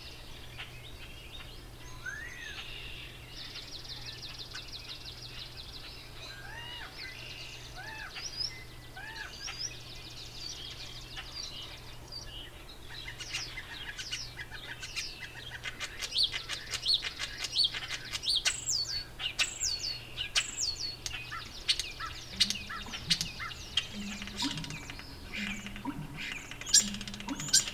Eagle Lake Rd, South River, ON, Canada - DawnBirds 20200502 Reveil
Dawn chorus activity at 5:30 am. Recorded at Warbler's Roost in unorganized township of Lount in Parry Sound District of Ontario.